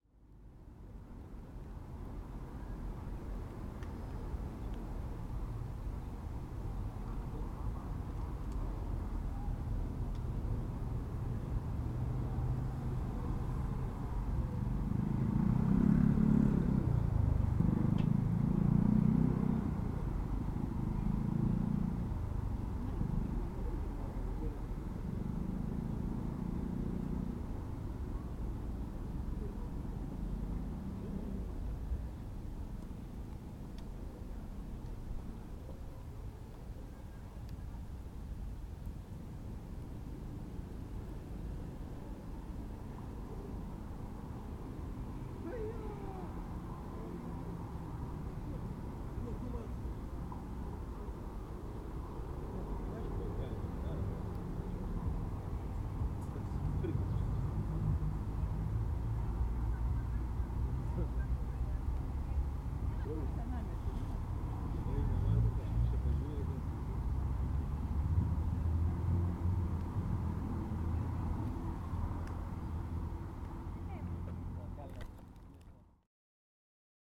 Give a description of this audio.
Cars and motorbikes passing by. People talking in the background. The square is situated next to Acadimias and Dimokratias street.